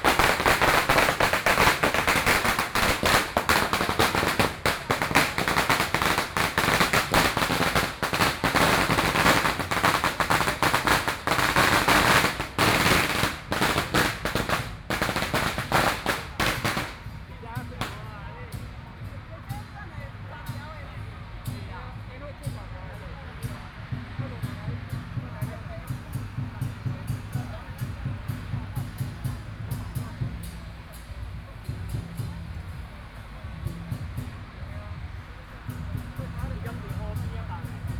Changqing Park, Taipei City - Festivals
Traditional Festivals, The sound of firecrackers, Traffic Sound
Please turn up the volume a little. Binaural recordings, Sony PCM D100+ Soundman OKM II